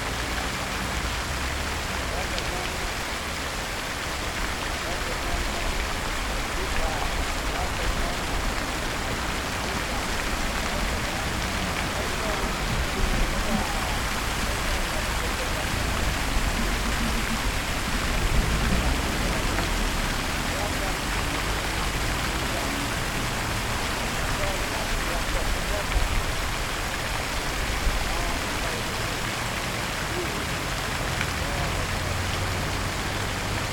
piazza cavour is the main traffic node in Foggia, five roads meet in a roundabout in the middle of the square with a big fountain in it.